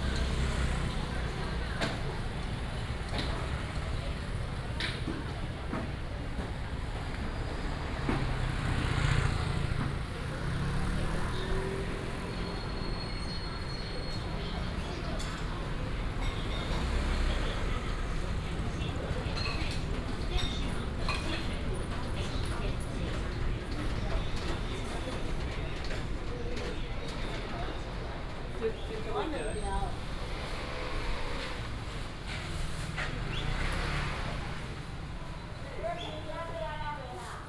{"title": "和平街, Yingge Dist., New Taipei City - Walking in a small alley", "date": "2017-08-25 07:37:00", "description": "Walking in a small alley, Traditional market, vendors peddling, traffic sound", "latitude": "24.95", "longitude": "121.35", "altitude": "56", "timezone": "Asia/Taipei"}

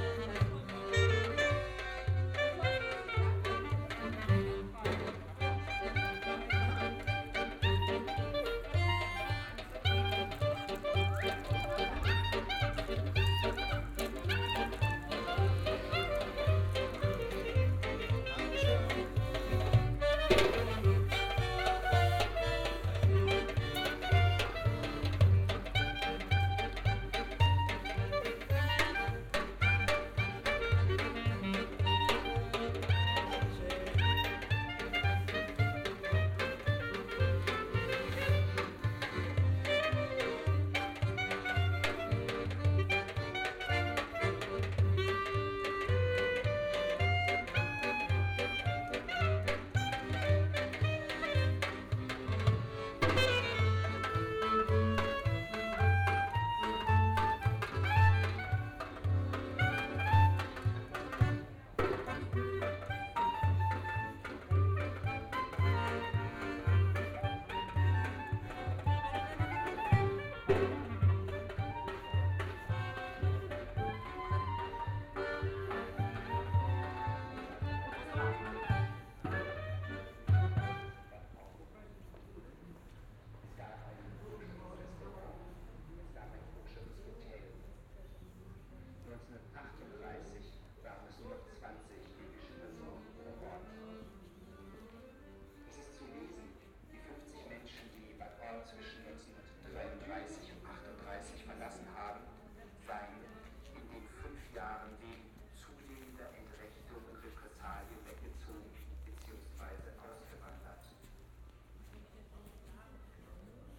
{"title": "Der Leerstand spricht. Bad Orb, Refugees - Leerstandwalkback", "date": "2016-11-14 13:05:00", "description": "'Der Leerstand spricht': From the street musicians a walk back down Hauptstrasse. The moderator is interviewing a youth, who proposes to use the empty houses for refugrees. Binaural recording", "latitude": "50.22", "longitude": "9.35", "altitude": "180", "timezone": "GMT+1"}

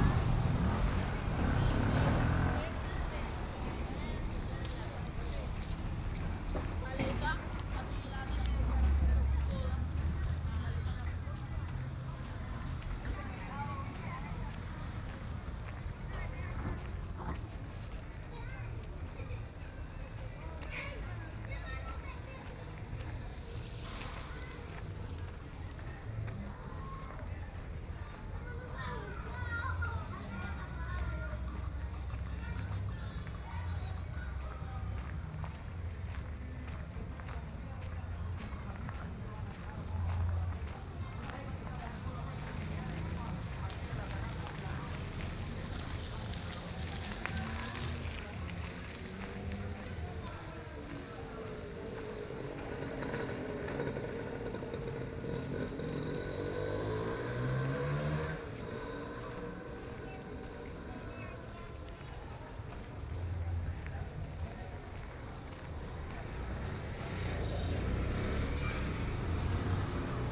{"title": "Chigorodó, Chigorodó, Antioquia, Colombia - Del hotel al colegio", "date": "2014-12-04 11:43:00", "description": "A soundwalk from Eureka Hotel up to Laura Montoya school\nThe entire collection can be fin on this link", "latitude": "7.67", "longitude": "-76.68", "altitude": "34", "timezone": "America/Bogota"}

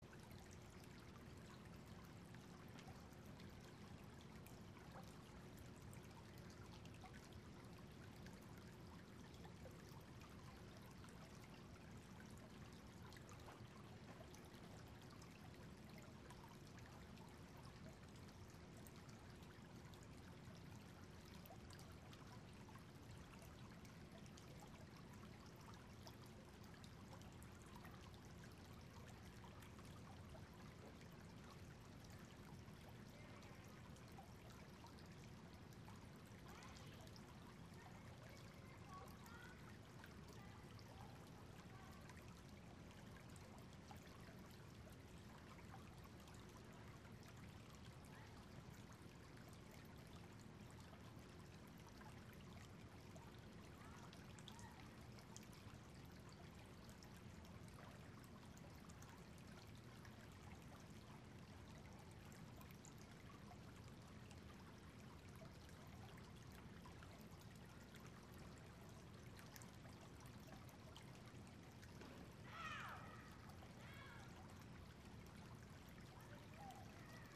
{"title": "Berkeley - campus, Strawberry creek", "date": "2010-04-03 02:36:00", "description": "Strawberry creek running through the campus of the university of California", "latitude": "37.87", "longitude": "-122.26", "altitude": "90", "timezone": "US/Pacific"}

